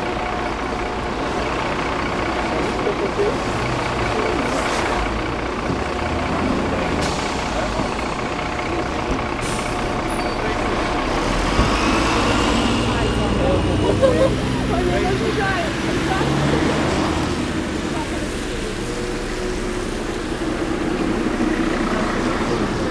Bulevardul 15 Noiembrie, Brașov, Romania - 2016 Christmas in Brasov - Bus Station
The bus station is always an interesting atmosphere to listen to. Four years ago they were just installing digital timetables and some people are commenting that even if they show that the next bus will arrive in 5 minutes, they have waited even 30 minutes. It's a good example of how recorded sound can literally describe a moment in time. Recorded with Superlux S502 Stereo ORTF mic and a Zoom F8 recorder.
România